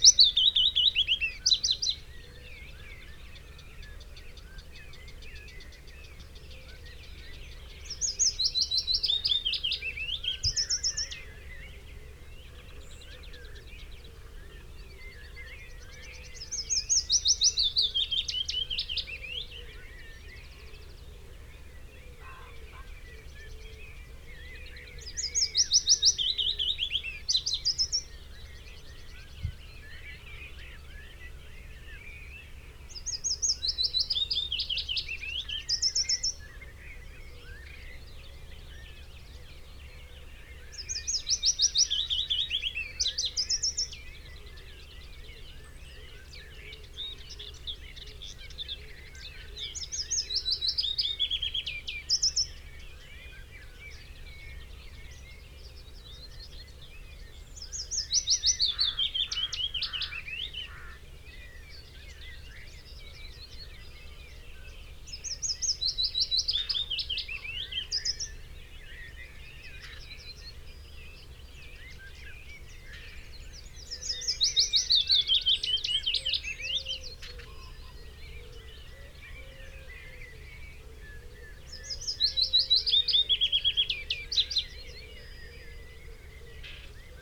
Green Ln, Malton, UK - willow warbler song soundscape ...
willow warbler song soundscape ... Luhd PM-01 binaural mics in binaural dummy head on tripod to Olympus LS 14 ... bird calls ... song ... from ... red-legged partridge ... pheasant ... chaffinch ... wood pigeon ... skylark ... whitethroat ... linnet ... blue tit ... crow ... blackbird ... song thrush ... some background noise ...